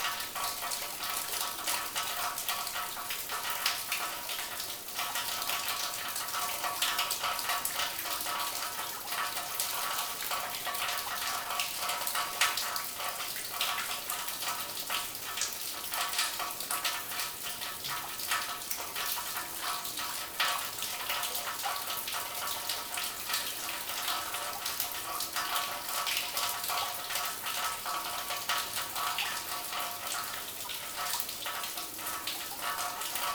Bonneuil-en-Valois, France - Underground quarry
Into a big underground quarry, water falling from a pit on various objects like bottles. These bottles are covered with a thick layer of limestone.
July 2018